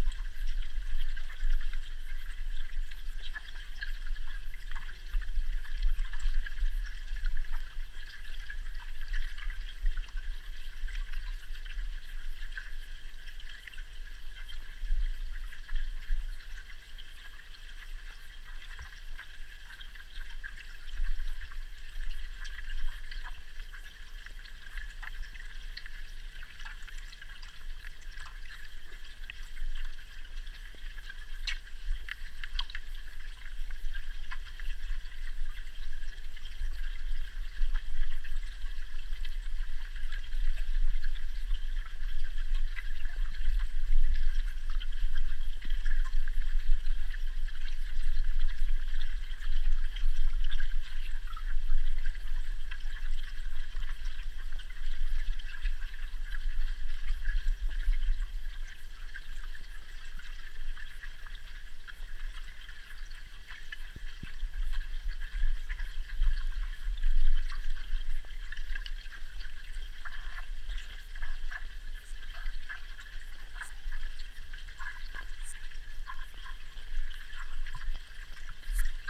Utena, Lithuania, underwater
hydrophone in the city's lake. some low noise from the traffick, some motor noise from the water pump, some water insects and underwater flows